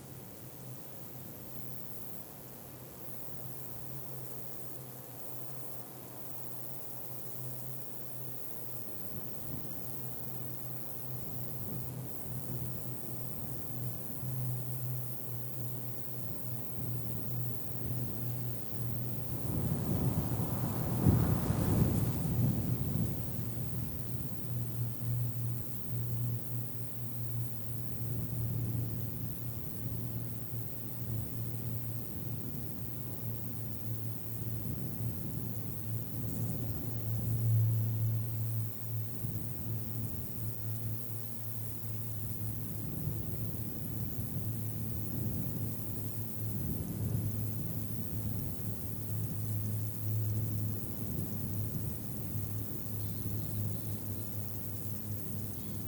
Wolbrom, Polska - insects
Zoom H4N, recording of insects in the grass.